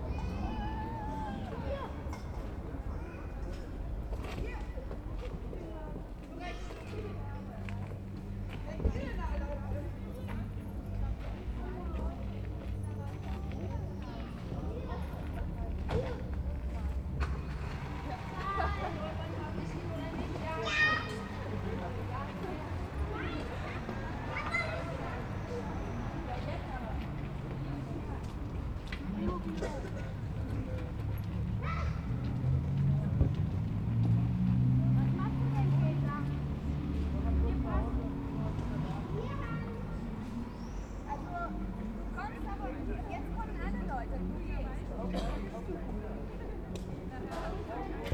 berlin, mengerzeile: bolzplatz
football world championship 2010, kids playing football in a sandlot
the city, the country & me: july 3, 2010